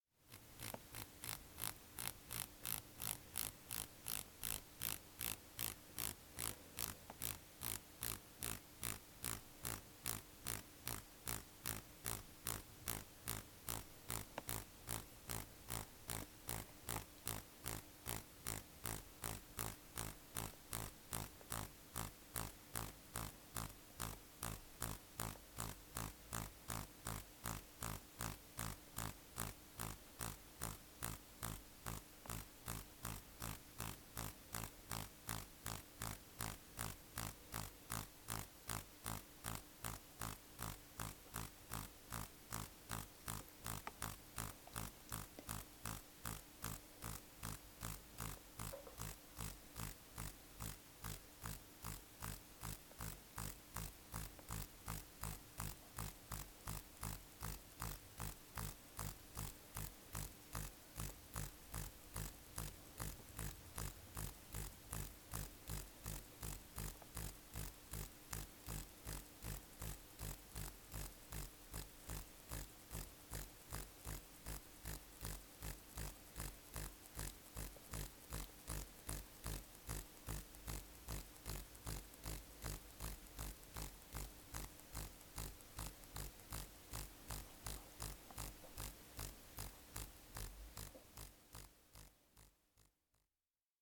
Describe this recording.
After several weeks of non-stop eating, many of the silkworms made cocoons and now silkmoths are beginning to emerge from them. Two days ago a beautiful plush adult male silkmoth emerged from his cocoon and was clearly waiting patiently for a lady moth for funtimes. Finally today at lunchtime, a lady moth emerged from her cocoon. You can tell the difference because she has a huge abdomen filled with eggs. The male moth got very excited and started flapping his wings around like mad, which is what you can hear in this recording. They will stay together for many hours, making eggs that I sadly will not be able to raise, but I am glad they made it this far and it has been amazing to watch and hear the whole process from tiny caterpillar to large, furry moth.